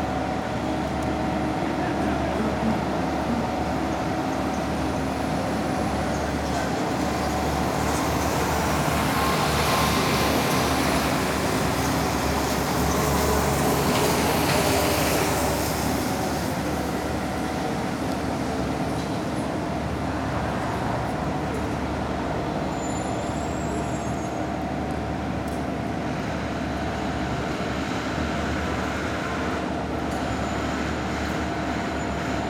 Porto, Francisco de Sá Carneiro Airport (OPO), outside of the departure terminal - morning runway ambience
waiting with other passengers for getting access to the plane. swish of the plane engines, hum of all the different machinery and vehicles operating on the runway. drops of water reverberated of the terminal walls.